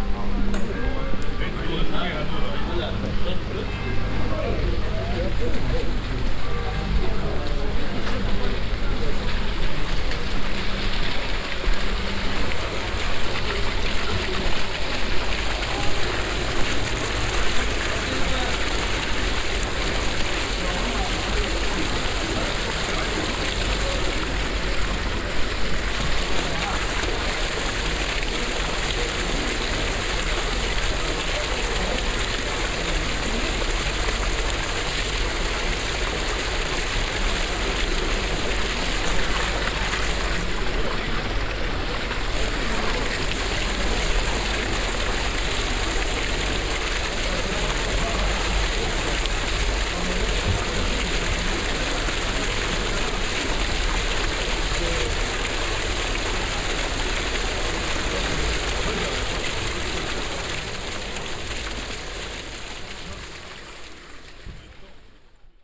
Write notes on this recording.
Recorded on a rainy summer evening. The evening bells and a bigger sculture fountain on the square. Vianden, Abendglocken und Brunnen, Aufgenommen an einem regnerischen Sommerabend. Die Abendglocken und ein großer Skulpturenbrunnen auf dem Marktplatz. Vianden, carillon du soir et fontaine, Enregistré par un soir d’été pluvieux. Le carillon du soir et une grande fontaine sculptée sur la place. Project - Klangraum Our - topographic field recordings, sound objects and social ambiences